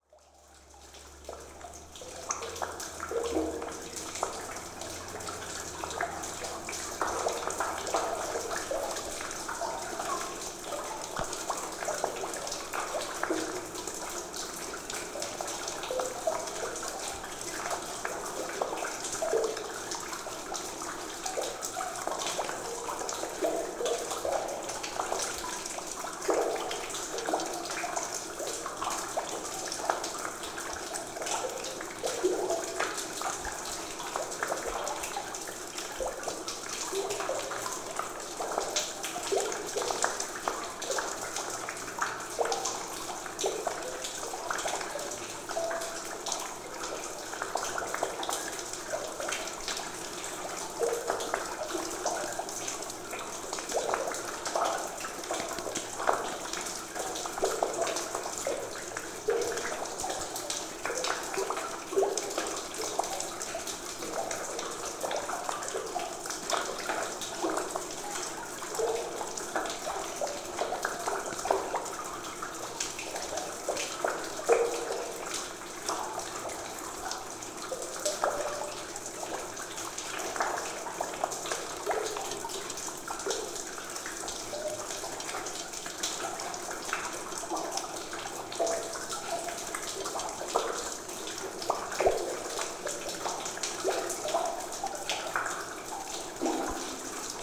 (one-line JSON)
{"title": "Raudoniškis, Lithuania, leaking watertower inside", "date": "2020-08-09 14:15:00", "description": "I have found some hole in watertower to put my small mics in...", "latitude": "55.43", "longitude": "25.68", "altitude": "189", "timezone": "Europe/Vilnius"}